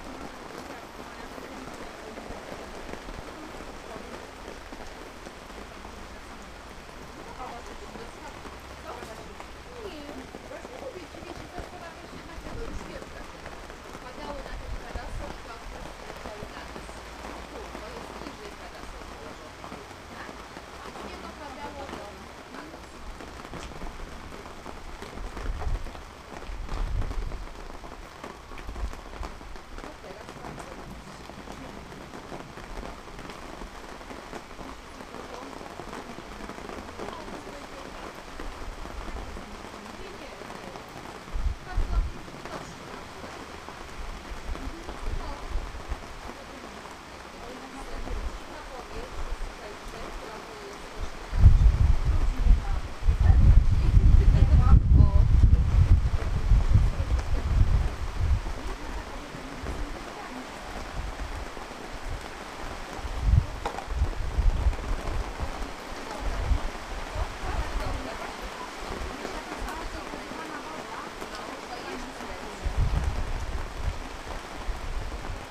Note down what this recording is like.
The onset of heavy rain in a fine restaurant's park space. Human voices & the rain's percussion in duett.